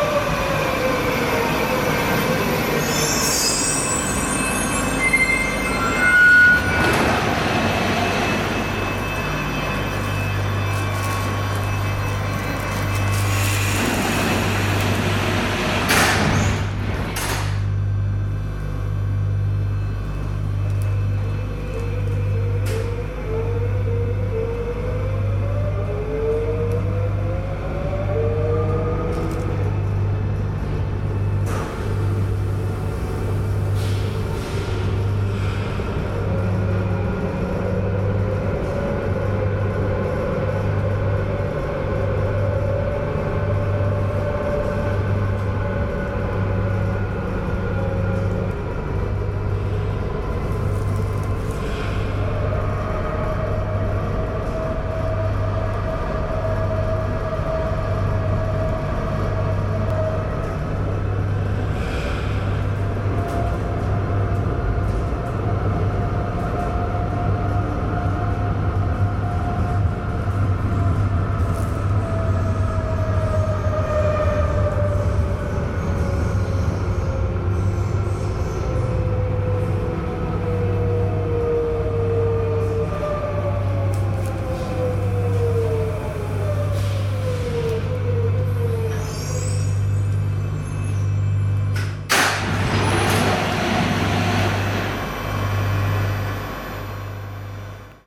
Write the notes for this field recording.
Station ambience, train approaching with amazing bass sounds, traveling one stop, Tascam RD-2d, internal mics.